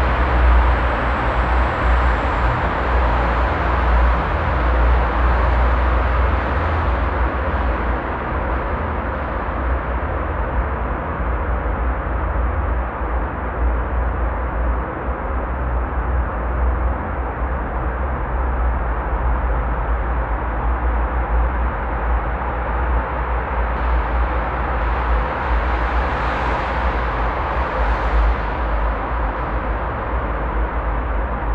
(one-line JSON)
{"title": "Schlossufer, Düsseldorf, Deutschland - Düsseldorf, Rheinufertunnel", "date": "2012-11-19 13:45:00", "description": "Inside the Rheinufertunnel, an underearth traffic tunnel. The sound of the passing by traffic reverbing in the tunnel tube.\nThis recording is part of the exhibition project - sonic states\nsoundmap nrw - topographic field recordings, social ambiences and art placess", "latitude": "51.23", "longitude": "6.77", "altitude": "33", "timezone": "Europe/Berlin"}